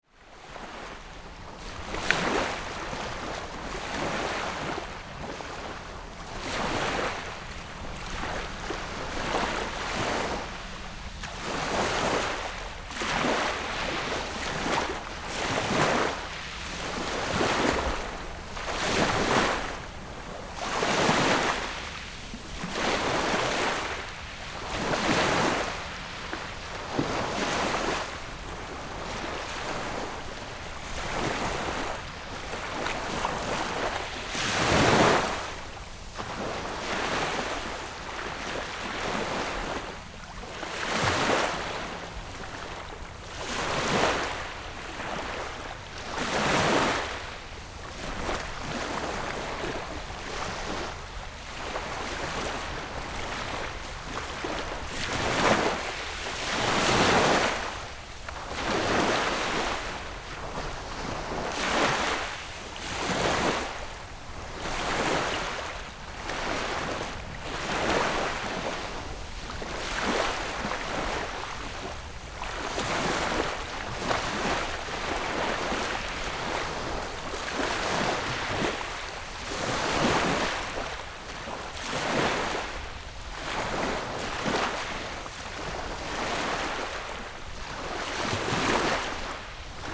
鎮海村, Baisha Township - Sound of the waves
Sound of the waves, Small beach
Zoom H6 Rode NT4
Penghu County, Baisha Township, 22 October 2014, ~10:00